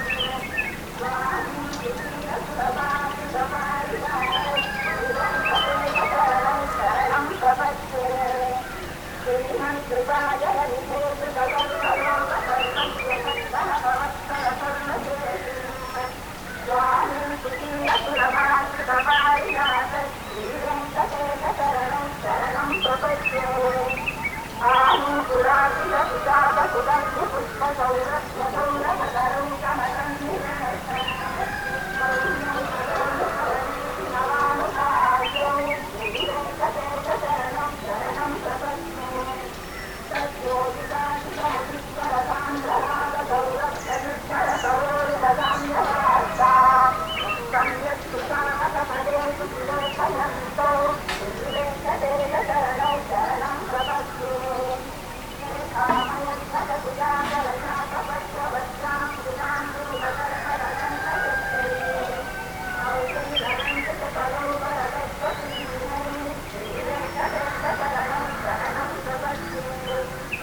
Nullatanni, Munnar, Kerala, India - dawn Munnar - over the valley
dawn Munnar - over the valley part one